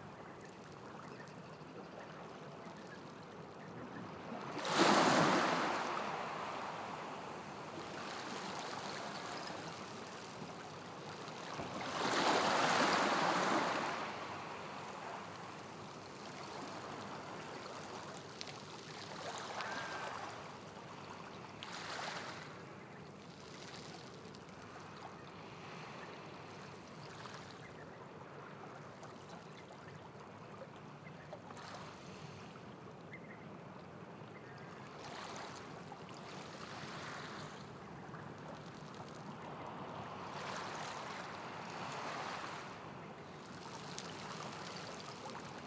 loading... - Small waves by the sea
Recorded using a SoundDevices Mixpre3 and a Sennheiser 8050 stereo set.
17 April 2019, ~20:00